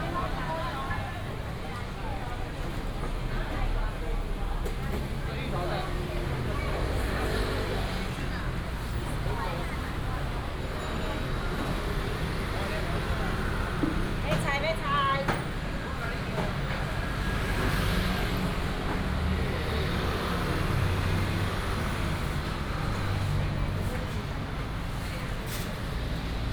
Jingu Ln., Sec., Changping Rd., Beitun Dist. - walking in the Street
Walking through the market
Taichung City, Taiwan, 22 March 2017